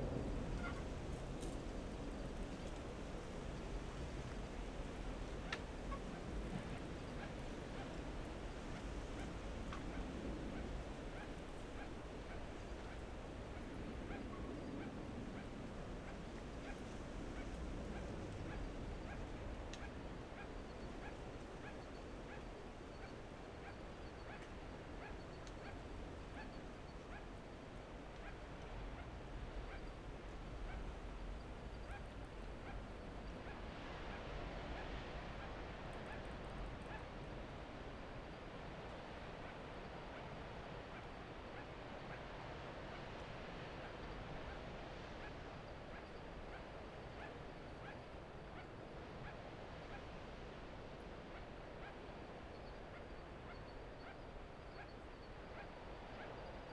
Royal National Park, NSW, Australia - Sugar glider and planes
Sugar glider (Petaurus breviceps) calling at night with the wind and the waves and the planes.
Recorded with an AT BP4025 into a Tascam DR-680.